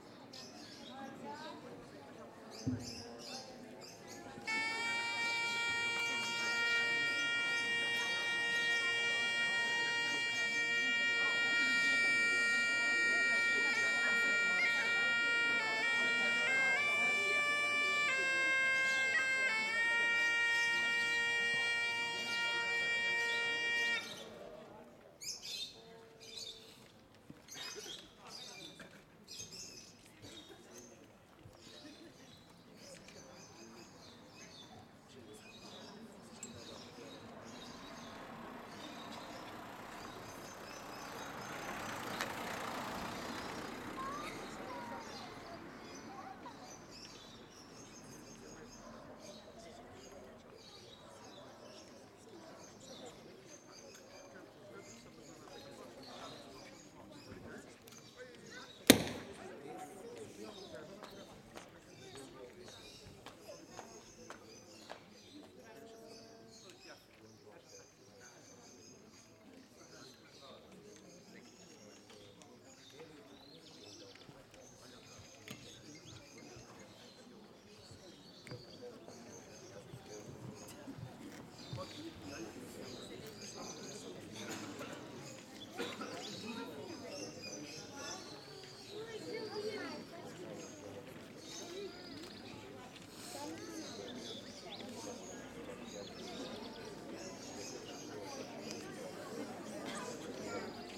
R. Maestro Artur Salguinha, Ançã, Portugal - 25 de Abril commemorations in Ançã
Comemorations of the Carnation Revolution/25 de abril in the town of Ançã, Cantanhede.
A group of children, locals, and town officials sing "Grandola Vila Morena" by Jose Afonso - one of the songs broadcasted as a military signal for the revolution.